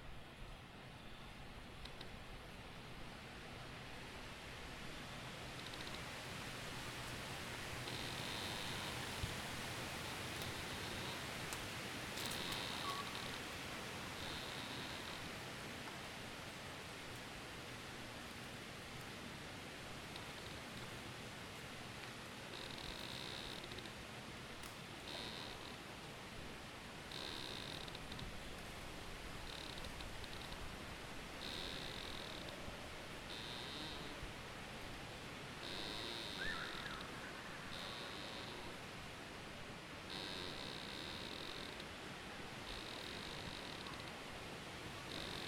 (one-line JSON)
{"title": "Tree Trail, Lost Bridge West State Recreation Area, Andrews, IN, USA - Trees creaking in the wind. Tree Trail, Lost Bridge West State Recreation Area", "date": "2020-10-17 17:15:00", "description": "Sounds heard on the Tree Trail at Lost Bridge West State Recreation Area, Andrews, IN. Recorded using a Zoom H1n recorder. Part of an Indiana Arts in the Parks Soundscape workshop sponsored by the Indiana Arts Commission and the Indiana Department of Natural Resources.", "latitude": "40.77", "longitude": "-85.64", "altitude": "256", "timezone": "America/Indiana/Indianapolis"}